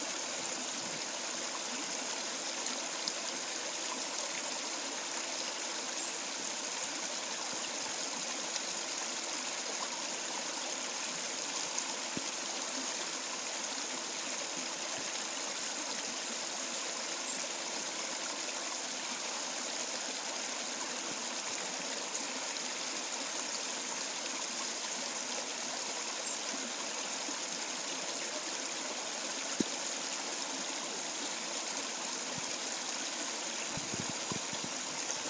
Vassar College, Raymond Avenue, Poughkeepsie, NY, USA - Water Trickling at Sunset Lake
Water Trickling over the rocks at Sunset Lake at Vassar College. Example of a Soundscape for MEDS 160 Music and Sound Assignment.
25 February 2015, ~15:00